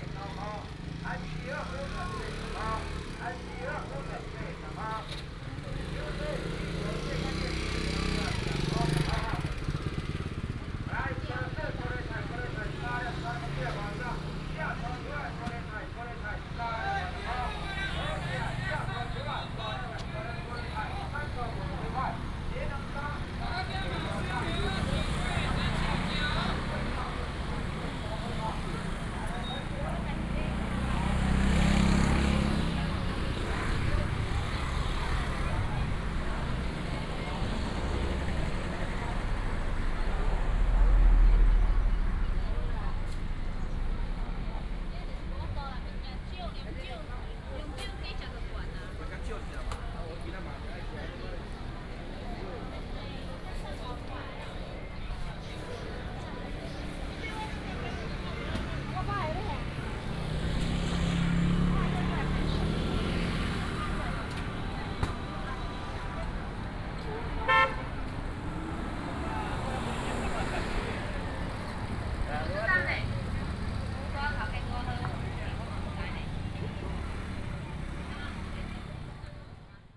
Vegetables and fruit shop, Traffic sound
Shetou Township, Changhua County, Taiwan, 6 April 2017